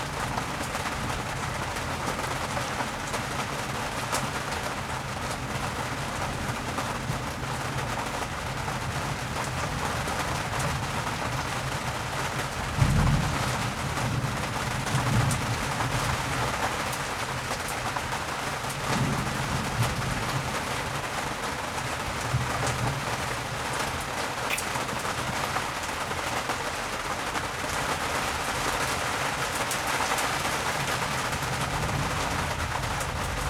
workum, het zool: marina, berth h - the city, the country & me: marina, aboard a sailing yacht
thunderstorm, heavy rain hits the tarp
the city, the country & me: june 28, 2011
Workum, The Netherlands, 28 June 2011, 21:54